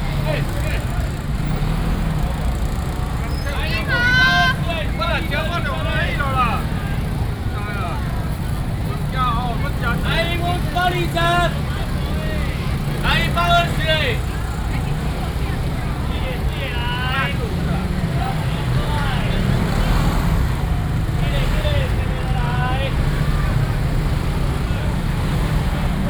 Sec., Jiangning Rd., Banqiao Dist., New Taipei City - Walking through the traditional market
Walking through the traditional market, Cries of street vendors, A large of motorcycles and people are moving in the same street